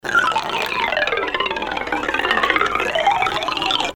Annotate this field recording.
and a third impression of the same object, Projekt - Klangraum Our - topographic field recordings, sound art objects and social ambiences